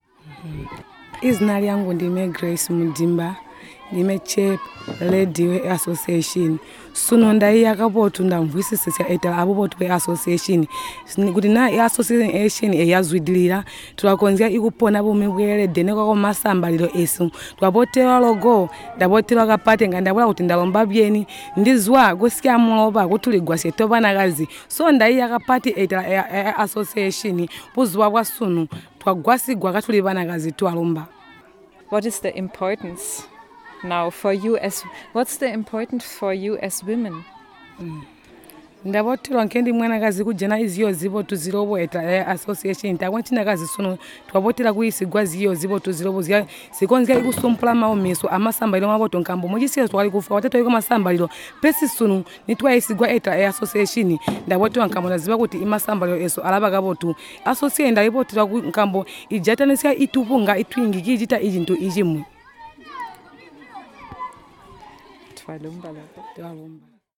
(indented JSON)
{
  "title": "Sebungwe River Mouth, Binga, Zimbabwe - Now we will speak for ourselves...",
  "date": "2016-05-24 15:30:00",
  "description": "The day at Sebungwe River Mouth Primary School also saw the election of a new Association. Representatives from three of Zubo Trust’s Women’s projects were coming together for an induction on the importance of such an association delivered by members of the Ministry of Women Affairs, Binga office. The training concluded with the election of the new Association’s board. The participating women were from the Bbindawuko Cooperative, the Siachilaba Fish Market Association and the Tuligwasye Women. The new Association will now represent the women’s concerns on district level – meaning in an environment, which up to now was dominated by men.\nHere, a statement of the just elected chairlady of the new Association, Grace Mudimba member of the Tuligwasye Women.",
  "latitude": "-17.75",
  "longitude": "27.23",
  "altitude": "502",
  "timezone": "Africa/Harare"
}